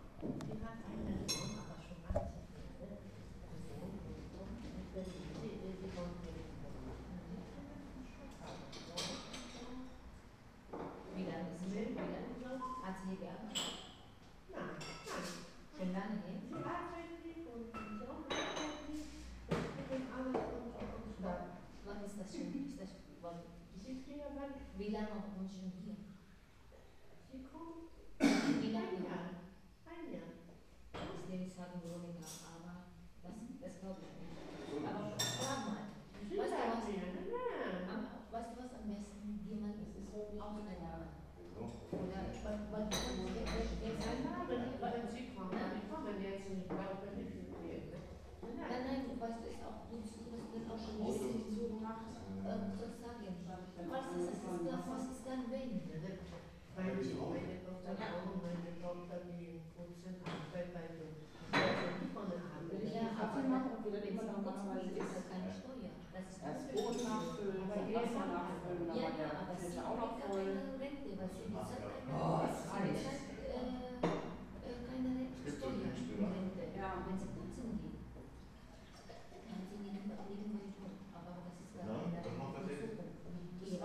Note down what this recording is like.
Kinästhetics course, day 2, before morning lesson; the cellar souterrain room provides a peculiar reverb. "H2"